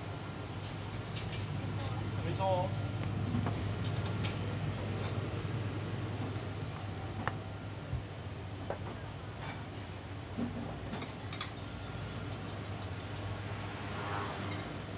Cooking the noodle and greeting in the famous traditional restaurant. 烹煮炒泡麵與招呼客人